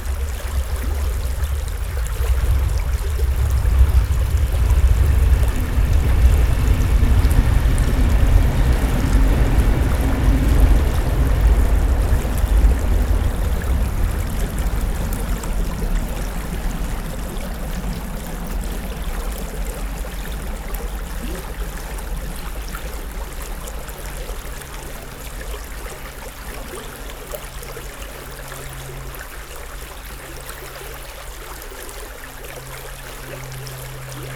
The Thyle river flowing, and a train quickly passing by.